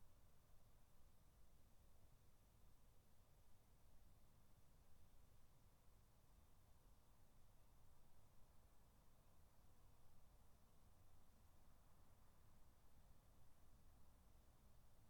3 minute recording of my back garden recorded on a Yamaha Pocketrak
Solihull, UK